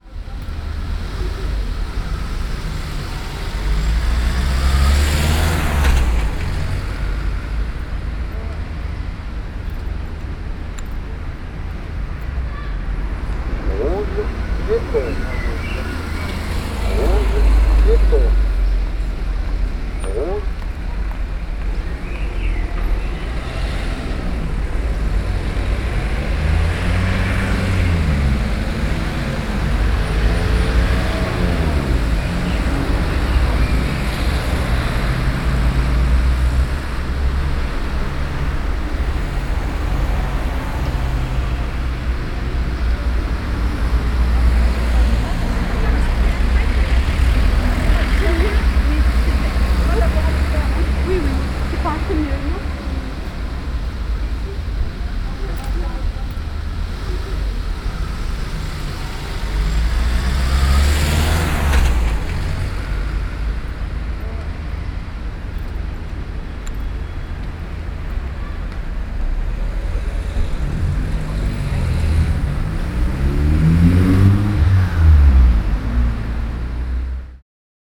Cahors, Boulevard Gambetta, Rouge Piéton
Cahors, France, 11 June